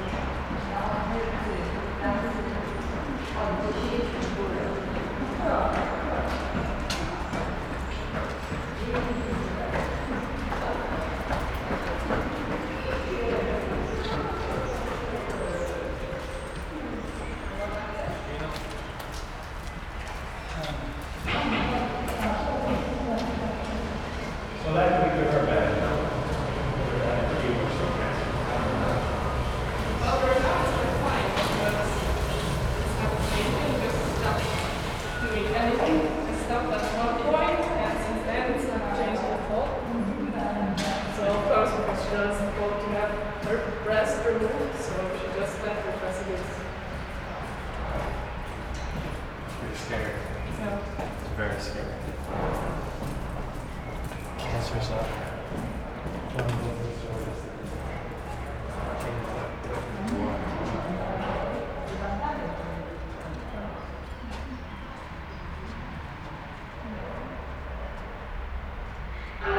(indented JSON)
{
  "title": "Poznan, Ogrody district, near Rusalka lake - underpass",
  "date": "2014-03-29 15:34:00",
  "description": "recording in an underpass commonly used by strollers and runners on their way to Rusalka lake. conversations, tick-tocks of bike gears, dog puffing, excavator working in the distance. nice, lush reverb.",
  "latitude": "52.42",
  "longitude": "16.89",
  "altitude": "75",
  "timezone": "Europe/Warsaw"
}